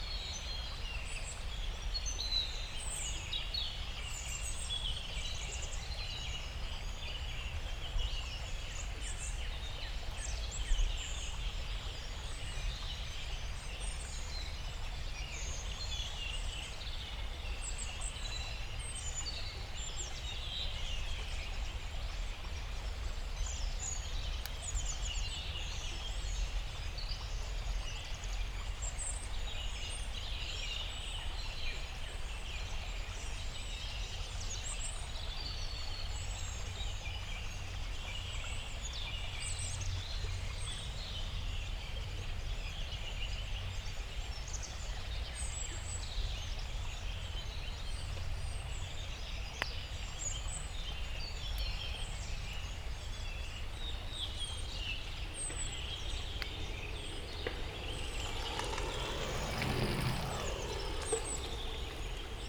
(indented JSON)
{
  "title": "Thielenbruch, Köln, Deutschland - evening forest ambience",
  "date": "2019-03-21 18:35:00",
  "description": "Thielenbruch forest, confluence of two creeks (Strunde, Umbach) evening ambience in early spring\n(Sony PCM D50, DPA 4060)",
  "latitude": "50.97",
  "longitude": "7.09",
  "altitude": "85",
  "timezone": "Europe/Berlin"
}